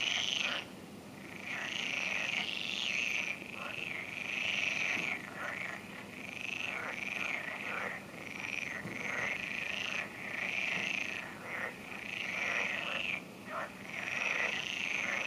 Via Mordure, Querceta LU, Italy - Frogs in Versilia river
Field recording of some frogs during a walk at night.
June 2018